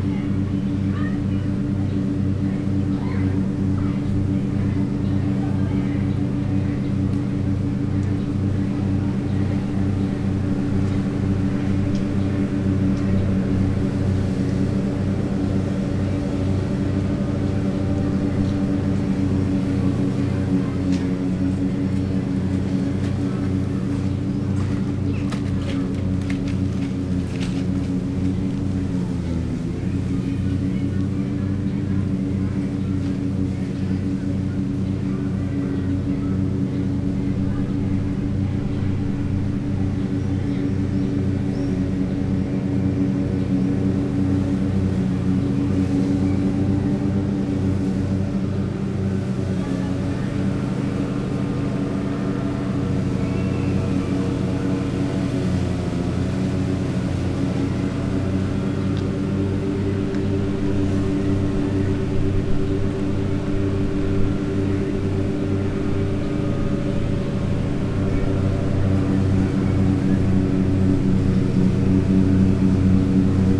Poznan, Jana III Sobieskiego housing estate - lawnmower action
a soccer field has its grass trimmed. man riding a tractor lawnmower back and forth. kids playing in a nearby kindergarten. (sony d50)
Poznań, Poland